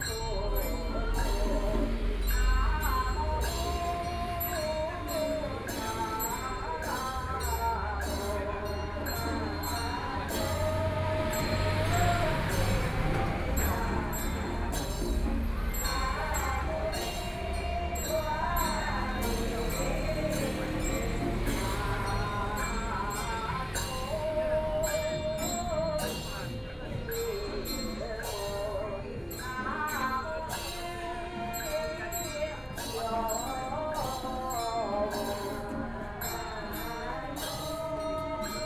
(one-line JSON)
{"title": "北投區桃源里, Taipei City - Temple festivals", "date": "2014-02-21 20:50:00", "description": "Temple festivals, Beside the road, Traffic Sound, Fireworks and firecrackers, Chanting, Across the road there is Taiwan Traditional opera\nPlease turn up the volume\nBinaural recordings, Zoom H4n+ Soundman OKM II", "latitude": "25.14", "longitude": "121.49", "timezone": "Asia/Taipei"}